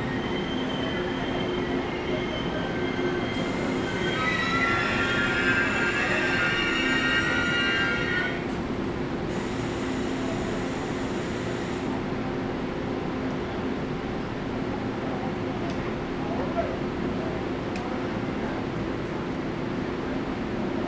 Taipei Main Station - In the station platform
In the station platform
Zoom H2n MS+XY
Zhongzheng District, Taipei City, Taiwan, 27 August 2014